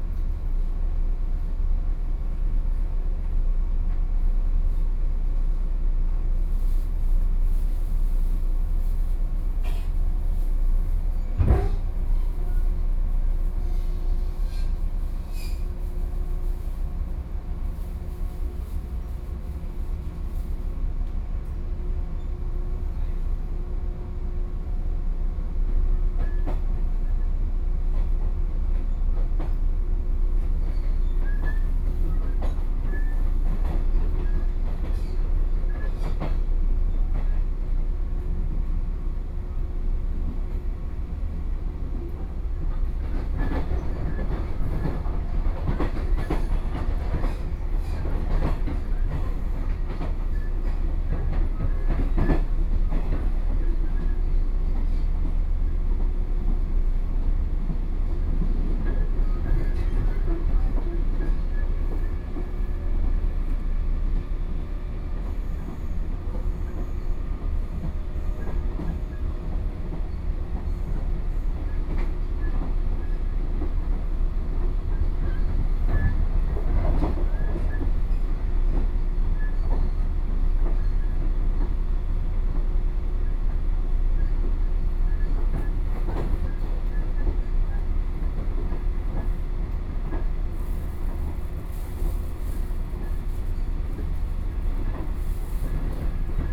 Hukou Township, Hsinchu County - In a local train
In a local train, on the train, Binaural recordings